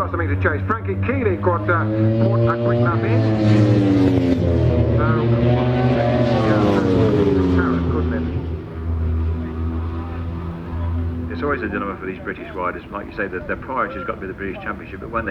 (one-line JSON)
{"title": "Silverstone Circuit, Towcester, UK - WSB 2002 ... free-practice ... contd ...", "date": "2002-05-25 11:25:00", "description": "World Superbikes 2002 ... WSB free-practice contd ... one point stereo mic to mini-disk ... date correct ... time probably not ...", "latitude": "52.07", "longitude": "-1.02", "altitude": "152", "timezone": "Europe/London"}